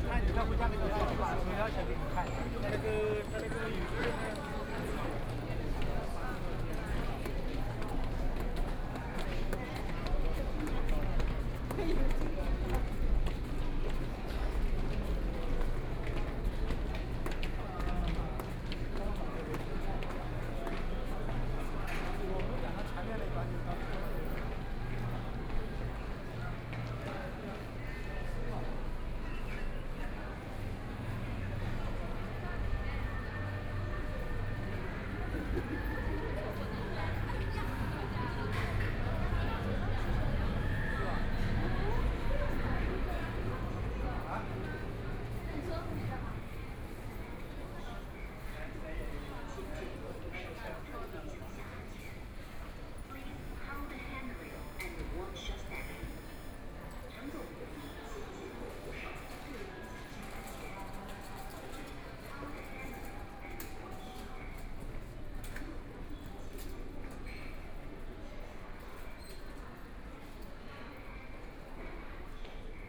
Laoximen Station, Shanghai - Walking through the station
Walking through the station, On the platform waiting for the train, Binaural recording, Zoom H6+ Soundman OKM II
3 December 2013, ~17:00